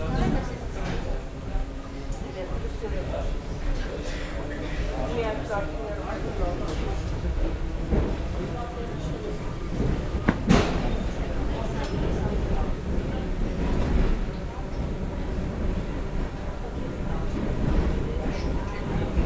Norshen, Arménie - The train in Armenia, between Gyumri to Norshen
A trip made in train between Gyumri station to Erevan station. The train in Armenia is old and absolutely not reliable ; the marshrutni minibuses are faster and better. It was an interesting manner to travel to see how it works into an Armenian train. It's slow and uncomfortable. People are incoming, and after 8 minutes, the travel begins. Whole transport to Erevan need 3 hours. This recording stops in Norshen.